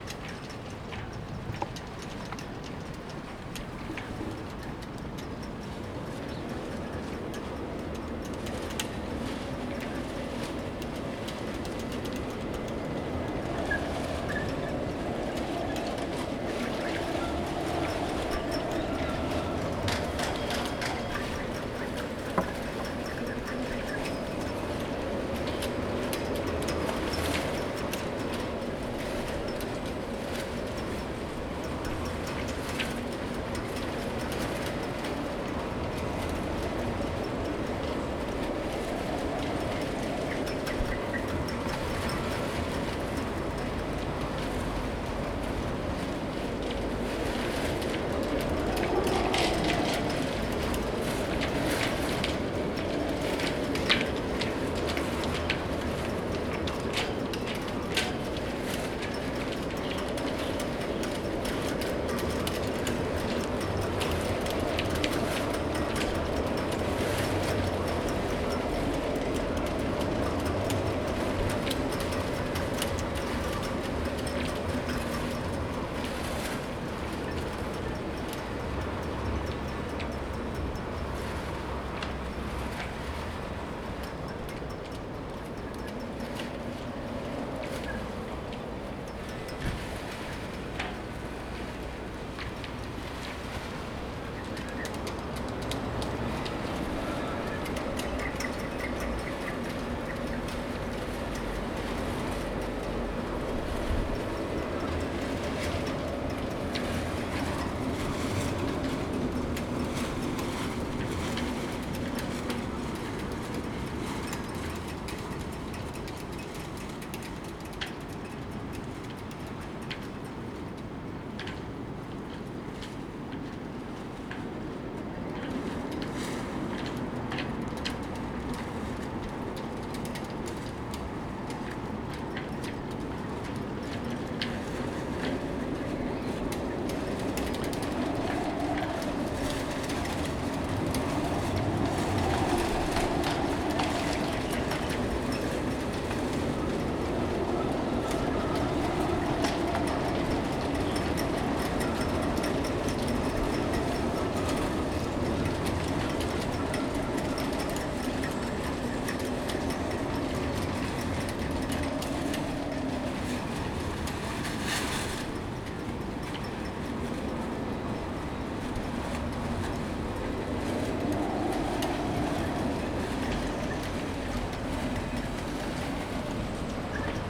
Shore View, Shore Rd, Lamlash, Isle of Arran, UK - Storm Brendan
The sound of the wind making the ropes and other lose bits bash against the masts. Recorded with Zoom H2n.
Scotland, United Kingdom